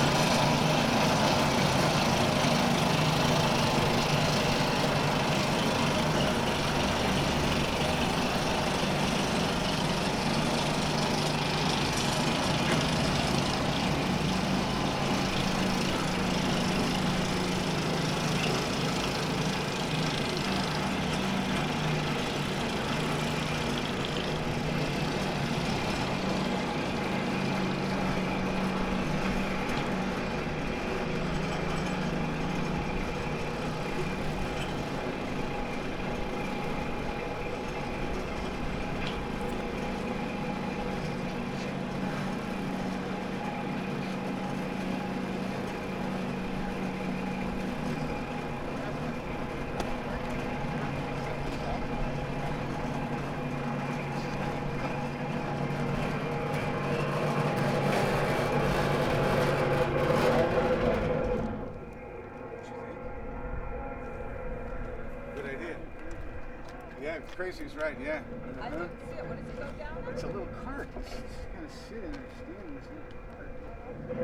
Corniglia, in front of restaurant Cecio - transporting contaprtion
recording of a simple transporting vehicle moving on a single rail. basically a motor that tows two carts. such contraptions are used commonly in this area by vineyard workers and construction workers to transport tools, materials and grapes up and down the hill. you can see it when you switch to street view. around 1:00-2:15 I recorded the resonating cover of the transporter. although the machine was already quite away the metal box was ringing, induced by the throbbing rail. later an excited american guy talking about the device, giving a thorough explanation how this machine works to his wife.
La Spezia, Italy